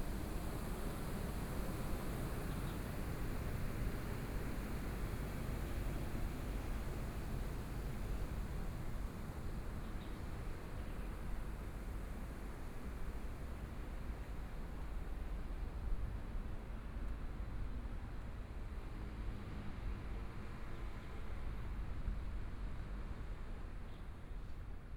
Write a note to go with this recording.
Walking through the small streets, Environmental sounds, Traffic Sound, Binaural recordings, Zoom H4n+ Soundman OKM II